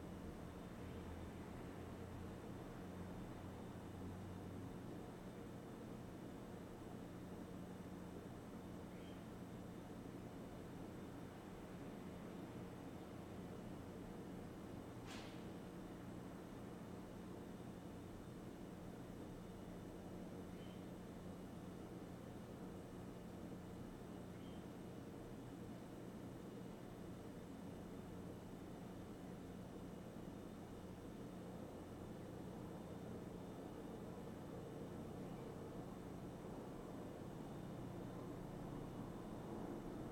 Norma Triangle, West Hollywood, Kalifornien, USA - Home Sound
829, North San Vicente Boulevard, Backyard of the Apartement Compound, early afternoon. Distant City sounds, birds and A/C sound. Zoom Recorder H2n